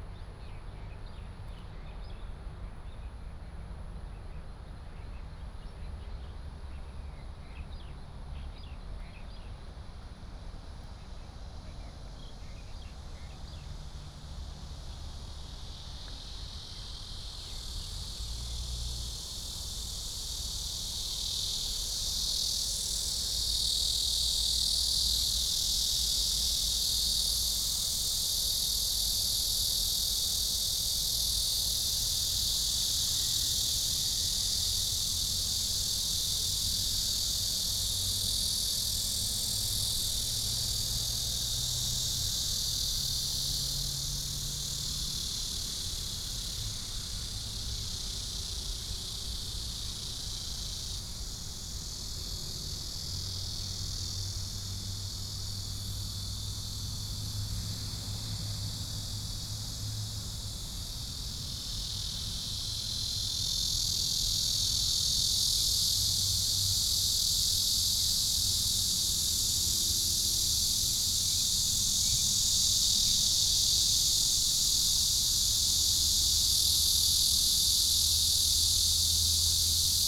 1-4號生態埤塘, Taoyuan City - eco-park
eco-park, Cicadas, Birds, Traffic sound
Taoyuan City, Taiwan, 5 July 2017, ~17:00